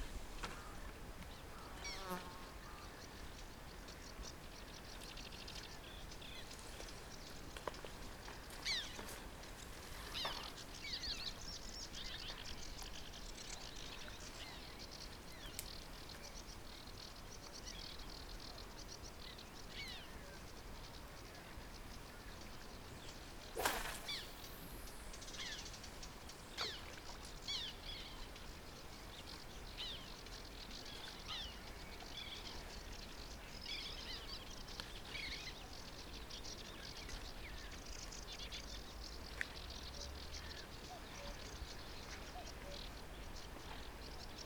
Sunny afternoon over the river Bug, fishing, birds, generally the sounds of nature and fat man trying to go through the mud...